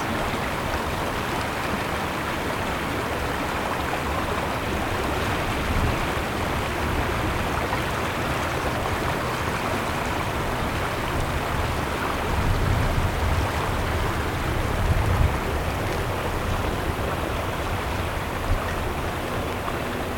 Valley Centre Dr, Scarborough, ON, Canada - Rouge River, Finch Meander
River bend with rapids, occasional traffic on distant metal bridge.
Ontario, Canada